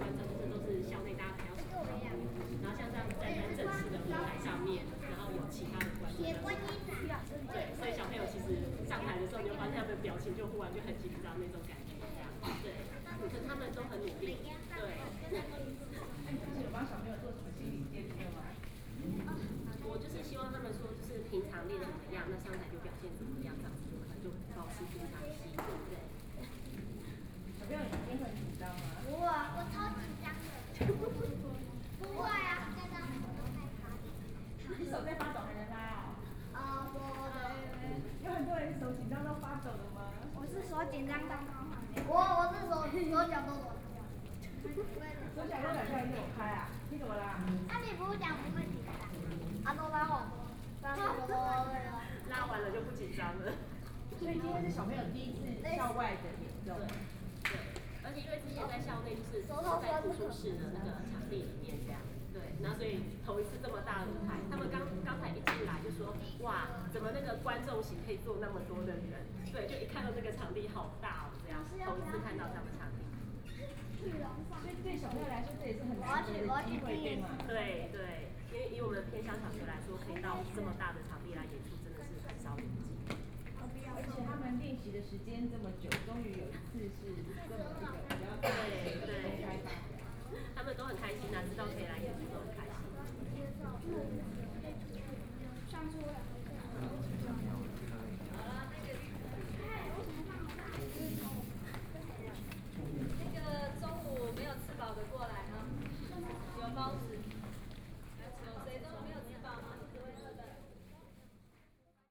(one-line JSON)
{"title": "National Changhua Senior High School - in the auditorium", "date": "2014-01-05 14:20:00", "description": "In the school auditorium hall, Elementary school students and teachers, Zoom H4n+ Soundman OKM II, Best with Headphone( SoundMap20140105- 1 )", "latitude": "24.07", "longitude": "120.55", "altitude": "38", "timezone": "Asia/Taipei"}